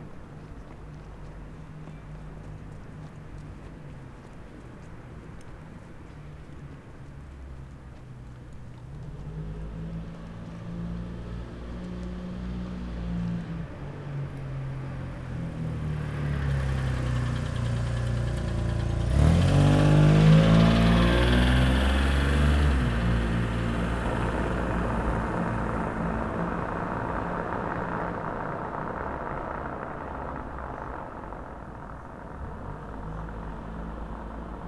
Berlin: Vermessungspunkt Maybachufer / Bürknerstraße - Klangvermessung Kreuzkölln ::: 19.04.2011 ::: 01:31